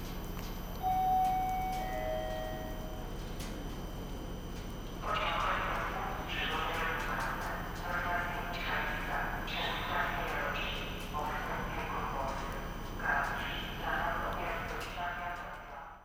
departure and arival announcements, water drops, glitchy sound of the train nearby.